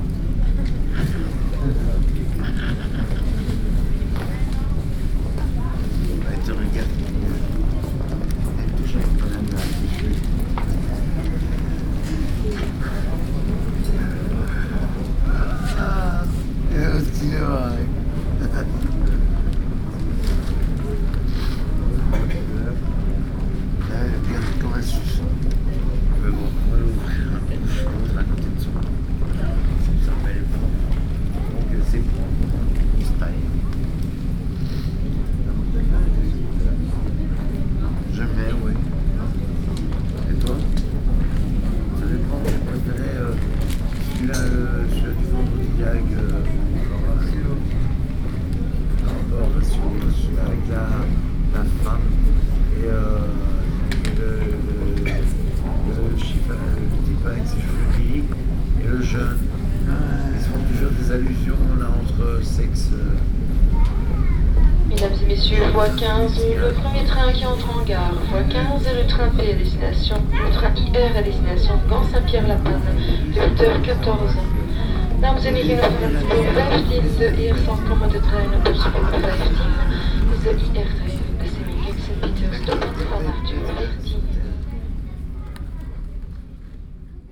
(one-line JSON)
{"date": "2008-03-25 07:48:00", "description": "Brussels, Midi Station, his rotten teeth", "latitude": "50.84", "longitude": "4.33", "altitude": "26", "timezone": "Europe/Brussels"}